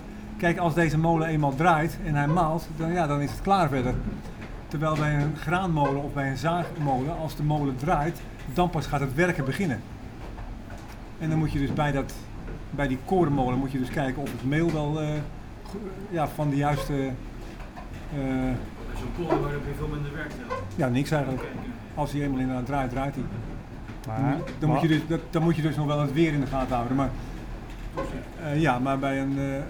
Molenaar Kees vertelt over de poldermolen vroeger
Leiden, The Netherlands, 9 July 2011